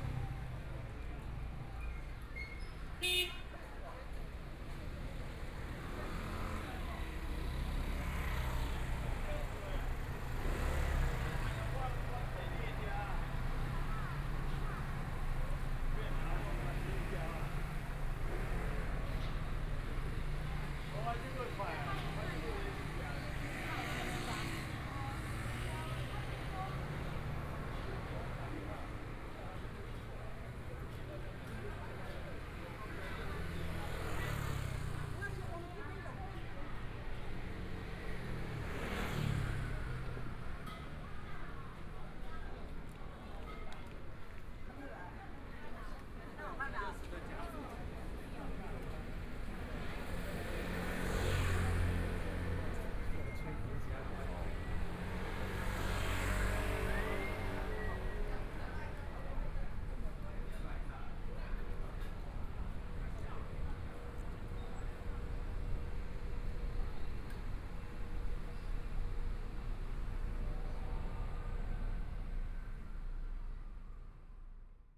Jinzhou St., Taipei City - walking in the Street
walking in the Street, Pedestrian, Traffic Sound, Motorcycle sound
Binaural recordings
Zoom H4n+ Soundman OKM II
15 February, 17:57